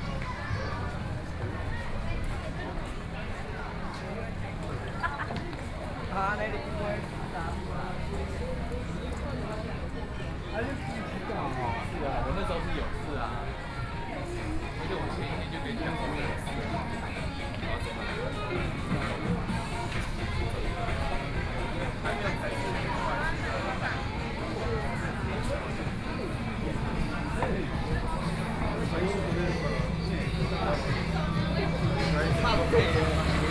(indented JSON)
{
  "title": "Dayu St., Hualien City - walking in the Street",
  "date": "2014-08-28 20:10:00",
  "description": "walking in the Street, Various shops voices, Tourists, Traffic Sound",
  "latitude": "23.98",
  "longitude": "121.61",
  "altitude": "13",
  "timezone": "Asia/Taipei"
}